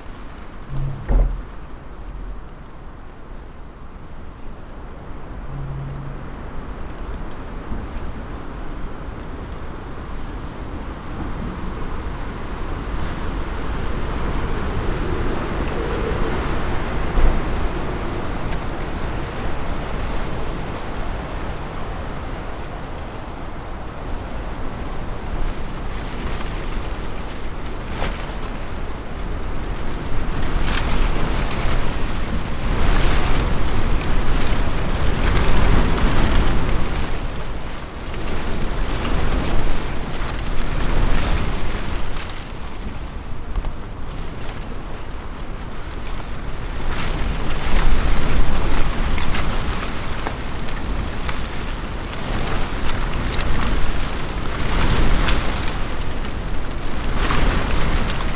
Recordings in a Fatsia, Malvern, Worcestershire, UK - Wind Storm
Overnight wind storm recorded inside a leafy shrub for protection. I used a Mix Pre 6 II with 2 Sennheiser MKH 8020s.
England, United Kingdom, 4 April 2021, 23:00